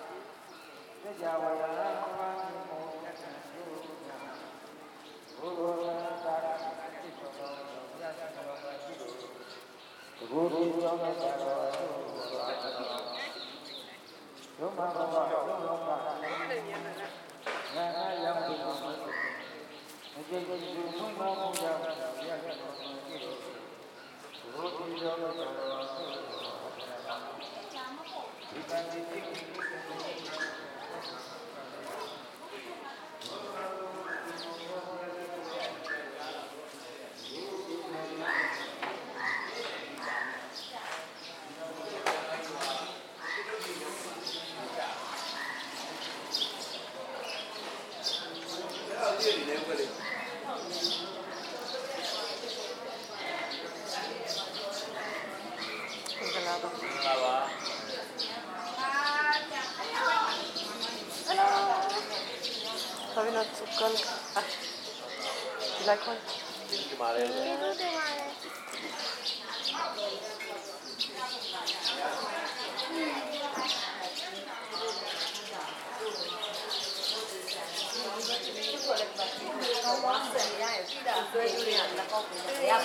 Street/ ၂၈လမ်း, Mandalay, Myanmar (Birma) - ein daw yar pagoda mandalay
ein daw yar pagoda mandalay. birma.
27 February 2020, 09:49